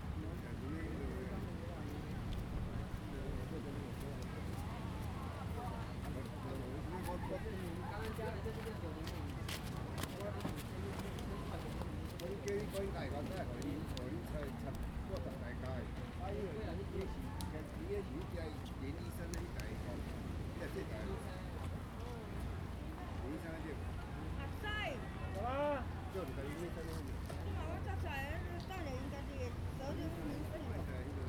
中澳海灘, Hsiao Liouciou Island - In the beach
In the beach
Zoom H2n MS +XY